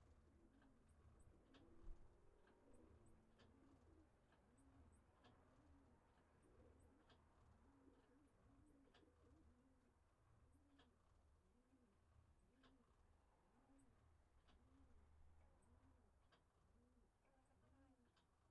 Brillon (Nord)
église St-Armand
Volée cloche grave + tintement cloche aigüe
15 March 2021, 14:00